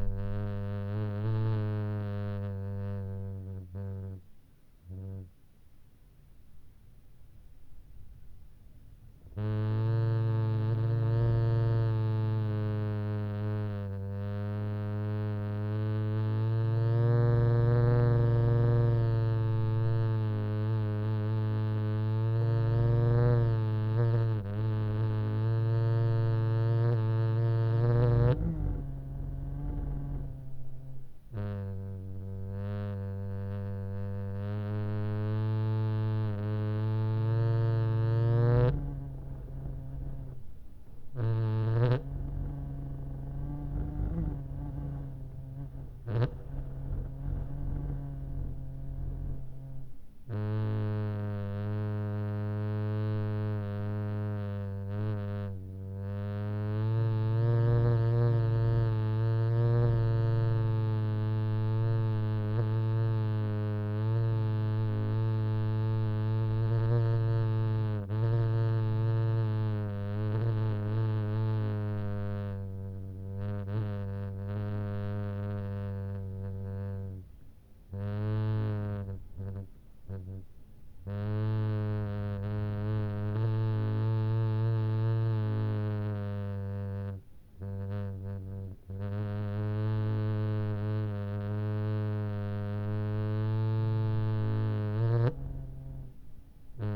Dumfries, UK - whistling window seal ...
whistling window seal ... in double glazing unit ... olympus ls14 integral mics on mini-tripod ...
Alba / Scotland, United Kingdom, February 2022